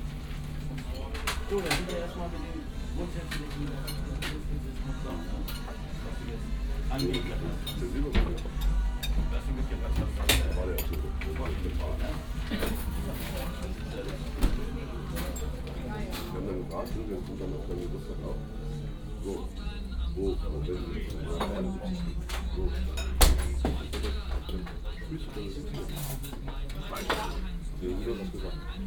Hamburg, Reeperbahn - Cafe Möller
cafe bar, familiar atmosphere, people having breakfast, dinner or beer.
Hamburg, Germany